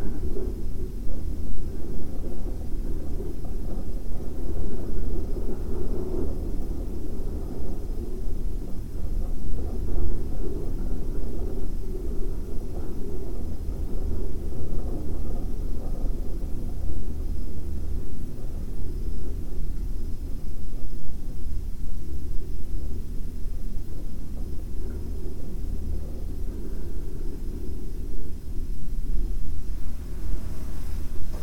Hotel a televizní vysílač Ještěd, Liberec, Česko - Ještěd
The sound of wind coming from the ventilation in the hotel room and the Ještěd transmitter.